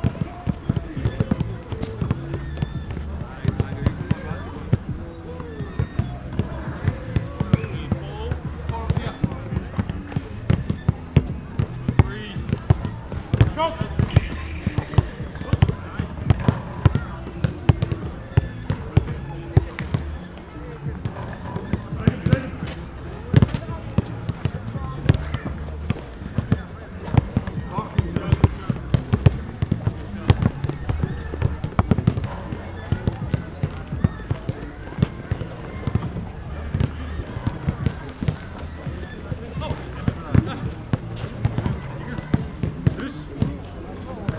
Serridslevvej, København, Danmark - sound of playing basketball.
Sound of playing basketball. Intens bumping of ball like big raindrops. recorded with Zoom h6. Øivind Weingaarde.